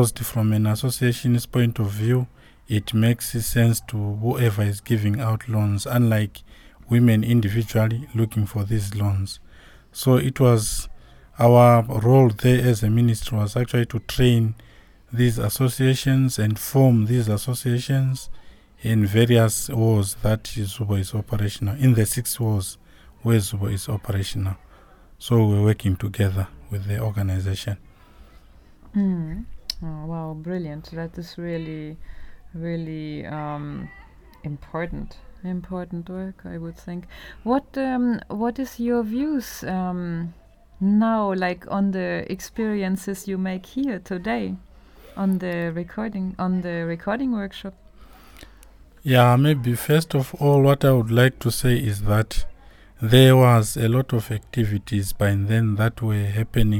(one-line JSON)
{"title": "Tusimpe, Binga, Zimbabwe - now Binga can speak to the world...", "date": "2016-07-06 12:15:00", "description": "...part of an interview with Anthony Ncube from the Ministry of Women Affairs in Binga. Zubo Trust invited also its local partners and stakeholders to our workshop. Antony participated actively in the training. We recorded this interview during one of the one-to-one training sessions. I asked Antony about the joint work of the Ministry and Zubo Trust and, based on this, of his experience now during the workshop... he beautifully emphasises on the possibilities of listening to the inside ('monitoring') and speaking to the outside, representing Binga and the Tonga people...\na recording made during the one-to-one training sessions of a workshop on documentation skills convened by Zubo Trust; Zubo Trust is a women’s organization bringing women together for self-empowerment.", "latitude": "-17.63", "longitude": "27.33", "altitude": "605", "timezone": "GMT+1"}